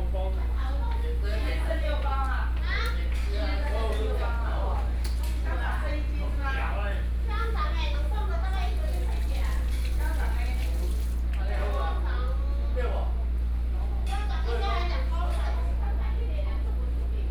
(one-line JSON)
{"title": "中福里, Guanshan Township - Vegetable wholesale shop", "date": "2014-09-07 11:30:00", "description": "in the Vegetable wholesale shop, small Town", "latitude": "23.05", "longitude": "121.16", "altitude": "228", "timezone": "Asia/Taipei"}